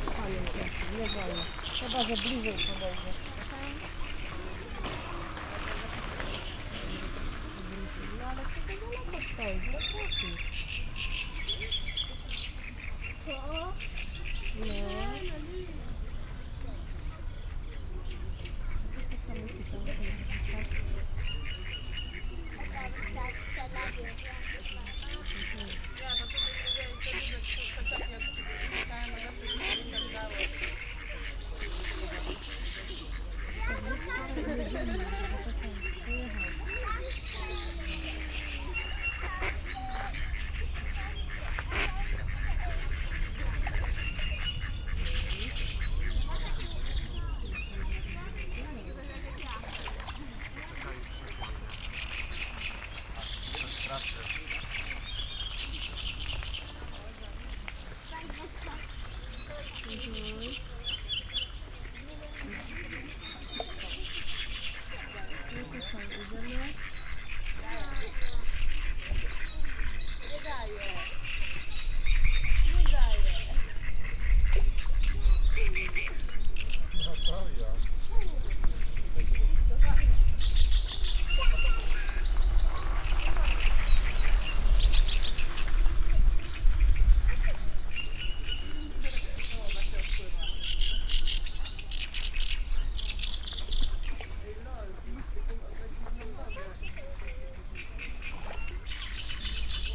Morasko, close to Campus UAM, Moraskie ponds - teenager ducks
(binaural) many different birds sharing space around the pond. a group of young ducks running right by my feet. as usual plenty of people resting at the pond, walking around, feeding the birds.